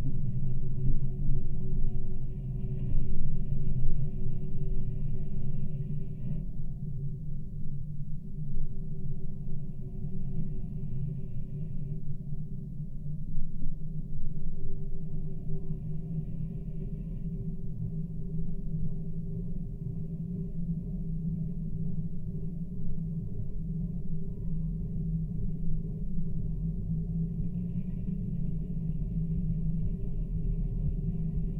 Molėtai, Lithuania, a lamp pole
Matallic lamp pole not so far from a fountain. Geophone recording.